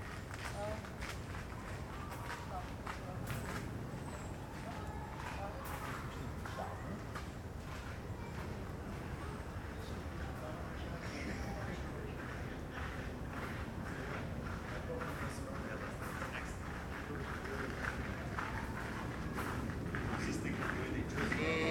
Schonbrunn tourist sheep, Vienna
sheep in the touristy Schonbrunn park
Vienna, Austria, 18 August